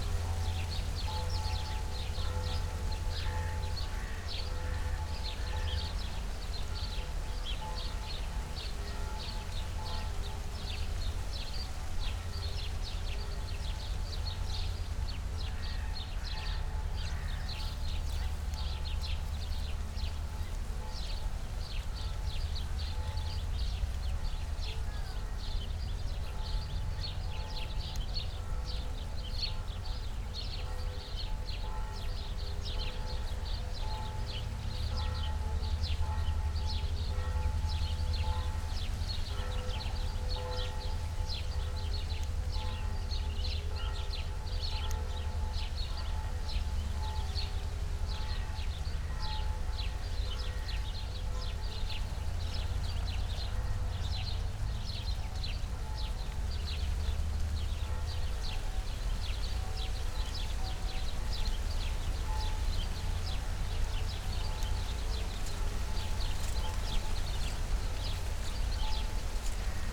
Tempelhofer Feld, Berlin, Deutschland - early summer ambience
light breeze, noon churchbells in the distance, hum of some machines
(Sony PCM D50, Primo EM172)